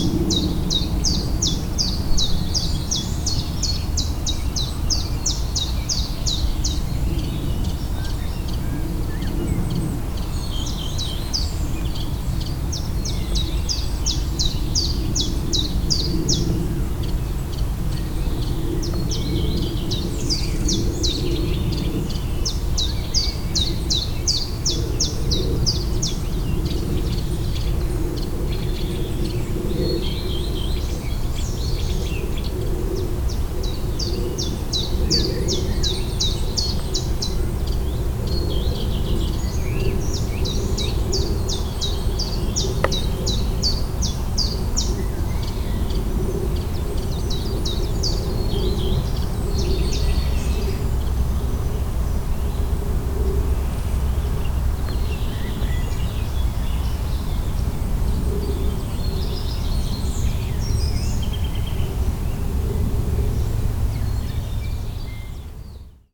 awake of the city. this is a general soundscape of every awake, no surprise: birds.